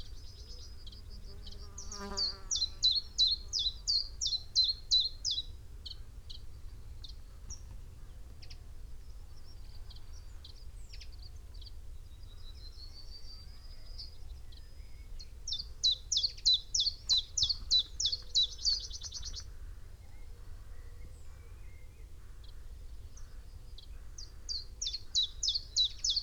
chiffchaff nest site ... male singing ... call ... in tree ... female calling as she visits nest with food ... xlr sass on tripod to zoom h5 ... bird calls ... song ... from ... yellowhammer ... blackbird ... pheasant ... crow ... whitethroat ... blue tit ... wren ... backgound noise ...